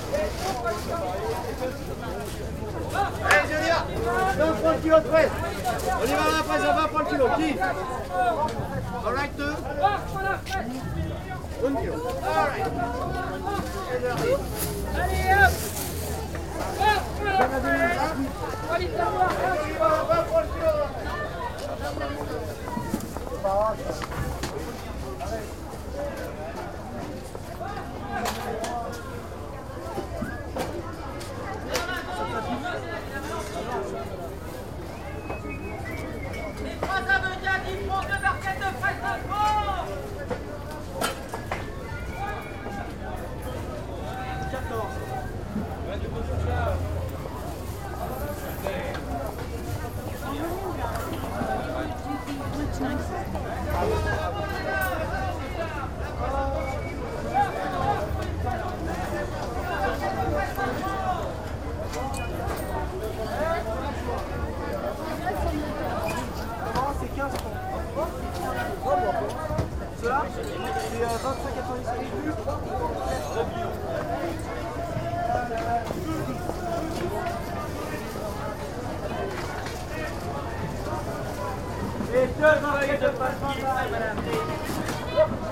paris, versailles, market
vendors calling on busy market place in the morning time
international cityscapes - topographic field recordings and social ambiences
12 December 2009, ~14:00